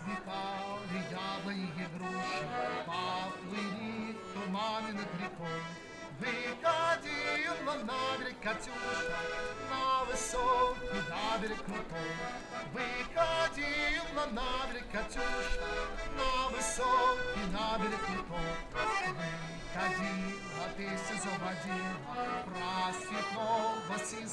Markt, Paderborn, Deutschland - Street Musician on christmas market